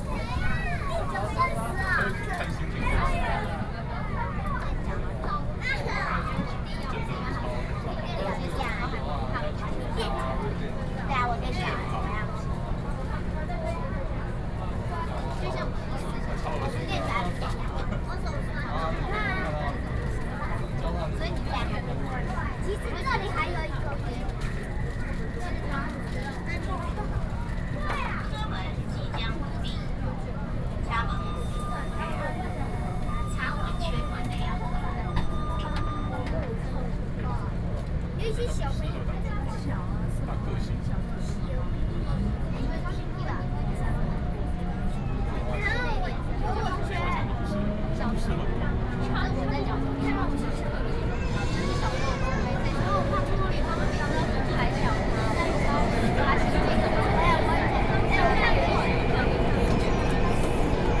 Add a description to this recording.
from Taipei Main Station to Zhongxiao Fuxing Station, Sony PCM D50 + Soundman OKM II